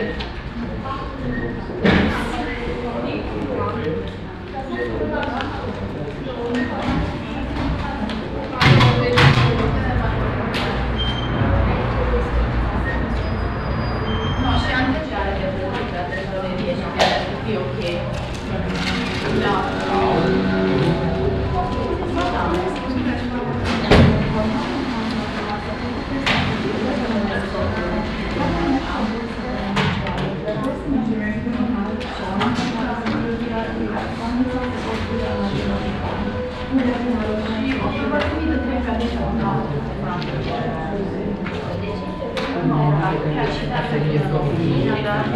Central Area, Cluj-Napoca, Rumänien - Cluj, bank and exchange office
Inside a bank with exchange office. The sounds of people talking in the waiting line and the electronic and mechanical sounds of different kind of bank machines and telephones. In the background the traffic noise coming in through the display window.
international city scapes - topographic field recordings and social ambiences
15 November 2012, 11:30